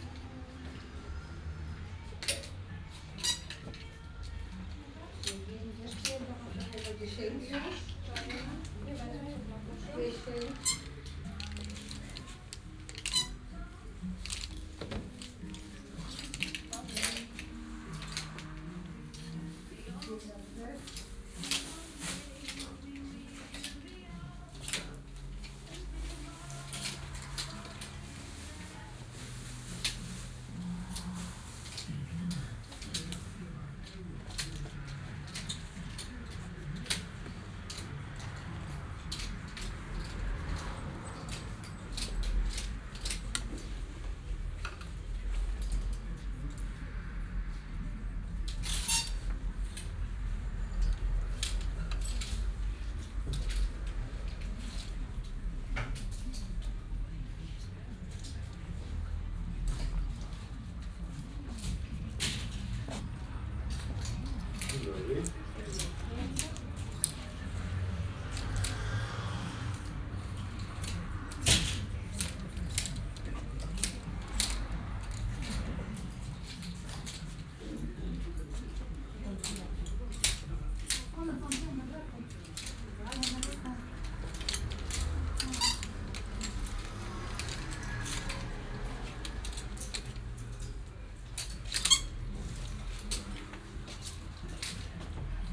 Adama Mickiewicza 1 street, Srem - secondhand shop
(binaural, please use headphones) recorded in a small shop with secondhand clothes. customers sliding plastic hangers with clothes, looking for a piece of clothing they like. small radio playing by the entrance, traffic noise from the street. (Roland r-07 + Luhd PM-01)